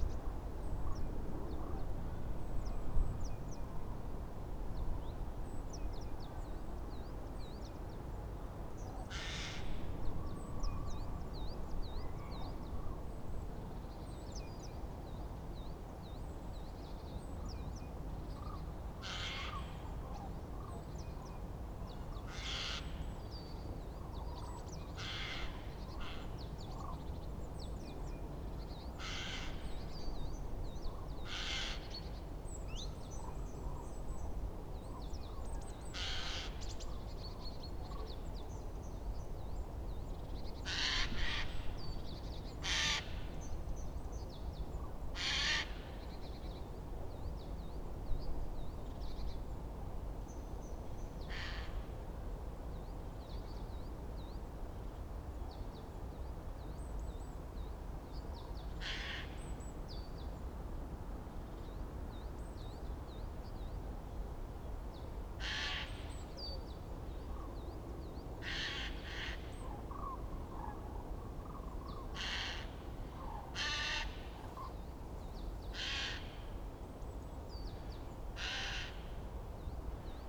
open field between Berlin Buch, Panketal and Hobrechtsfelde. The areas around were used as disposal for Berlin's wastewaster over decades.
(Sony PCM D50)